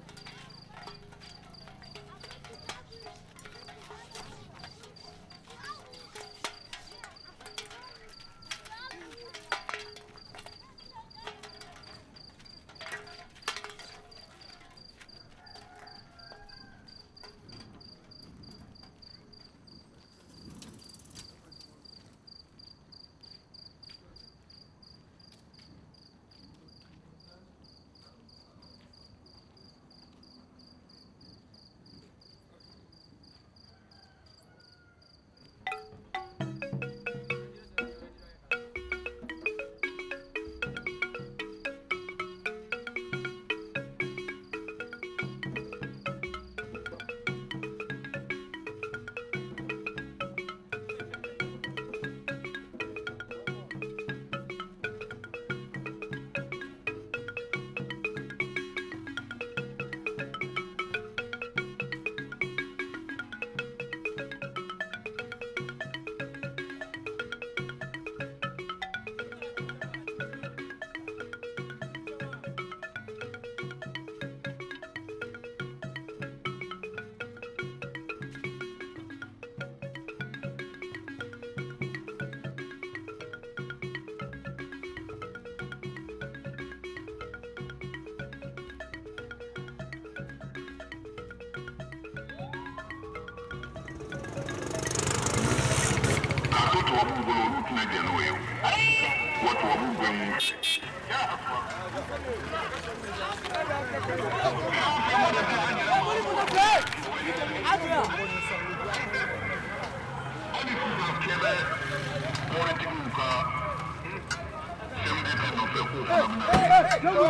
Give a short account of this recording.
Bamako - Mali, Déambulation matinale - ambiance